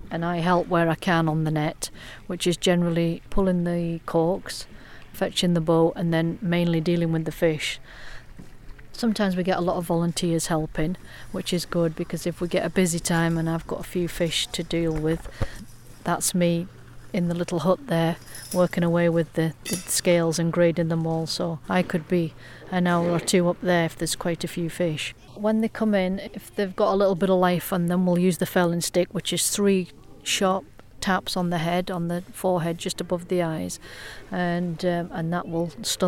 Paxton, Scottish Borders, UK - River Voices - Joanne Purvis, Paxton
Field interview on the banks of the River Tweed with net fisher Joanne Purvis of Paxton netting station. Jo describes the sights and sounds of traditional net fishing, against a background of river activity.
6 September 2013